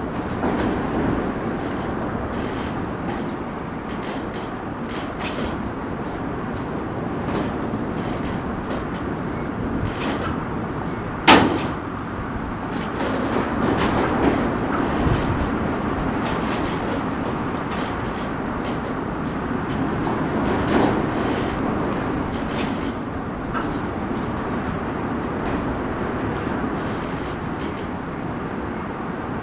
Hures-la-Parade, France - Tempest
This is the night I was almost died. In a terrible tempest, temperature falled to -13°C. I was sleeping outside because of the hiking, it was not intended to be so hard. Here is the moment in the first barn I found, after the tempest.
6 March, 05:30